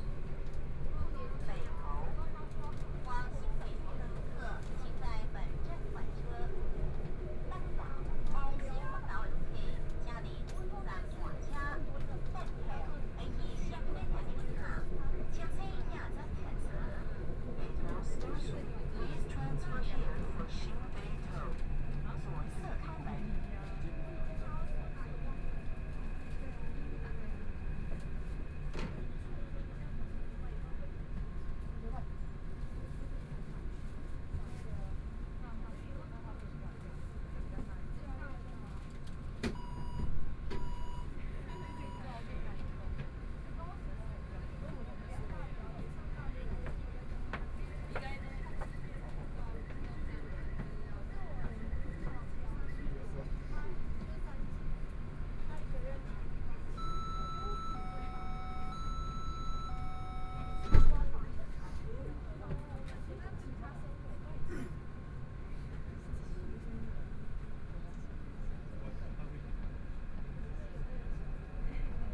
Tamsui Line, Taipei - Tamsui Line (Taipei Metro)
from Qiyan Station to Fuxinggang Station, Clammy cloudy, Binaural recordings, Zoom H4n+ Soundman OKM II
Beitou District, Taipei City, Taiwan